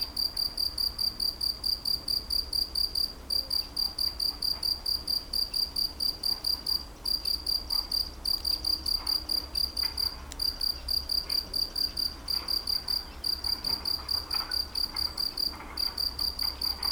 LHoumeau, France - Locust
Locust singing in the grass, along a WW2 abandoned bunker.